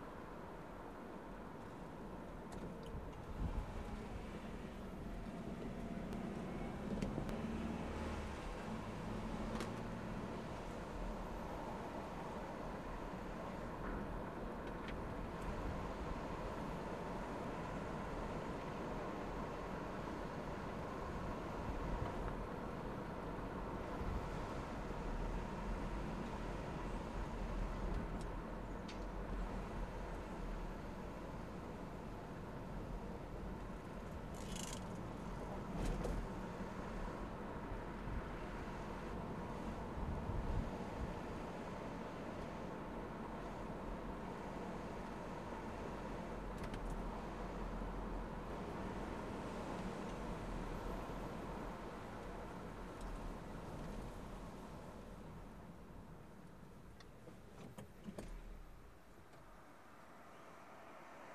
We do it every day until it seems we can do it in our sleep. Yet propelling 3,200 lbs of steel down the road at 60 mph is hardly something you'd want the people around you to be doing in their sleep.
Of all the soundscapes I've made, this one is a bit of a cheat. I found that a single commute on an average day is very, very boring (and thank goodness for that). The vast majority of cars on the road today are remarkably quiet and nondescript. It is the rare dumptruck or Harley that is even distinguishable, and they're usually hurtling past you in the next lane rather than waiting patiently to be recorded. So this soundscape was assembled from several trips, with windows up and windows down, on the highways and byways, morning and evening commutes. Several hours of raw tape was edited down to just 27 minutes of "highlights."
And I still didn't capture a single good crash.
Major elements:
* Getting into my truck (Mazda B-2200, 1989, red)
* Opening the garage door
13 September 1999, ~07:00